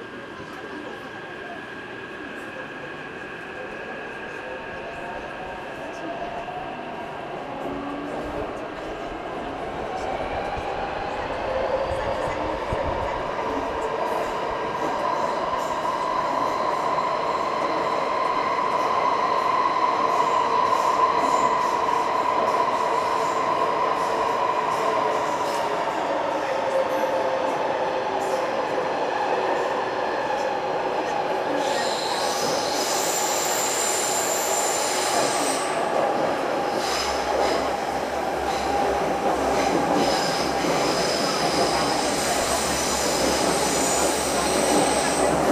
Sanchong District, New Taipei City - MRT Luzhou-Orange Line
Riding the MRT Luzhou/Orange Line (Sanhe Jr. High School Station to Minquan W. Road Station). Stereo mics (Audiotalaia-Primo ECM 172), recorded via Olympus LS-10.
New Taipei City, Taiwan, 30 November 2019